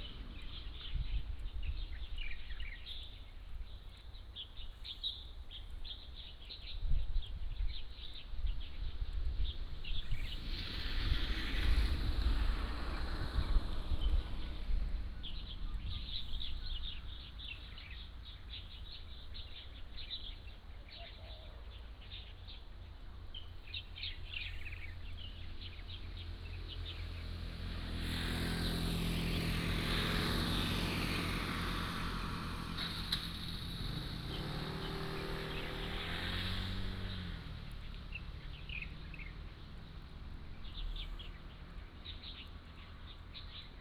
Nangan Township, Taiwan - Birdsong
In the corner of the road, Birdsong, Traffic Sound